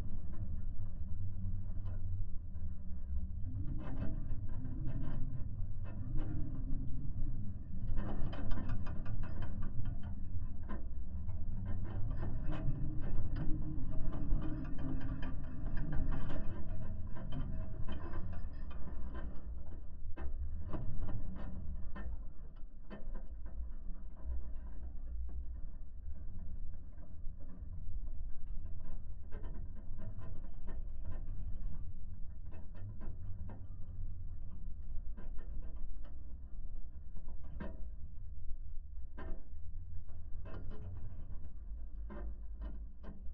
a piece of spiked wire found in a field. listening through contact microphones